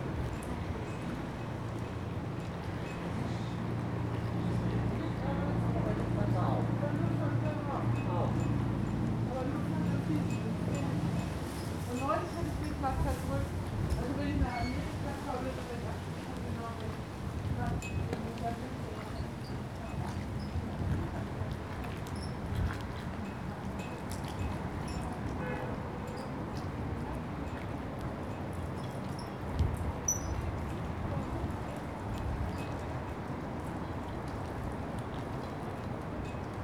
boats and barges attached to a temporary, metal, floating pier. as the boats float on waves - metallic, whining sounds of the barge's broadsides rubbing against the pier. drumming of rigging. city sounds - ambulances, helicopters, traffic. water splashes reverberated over the hotel's architecture.
Lübeck, Germany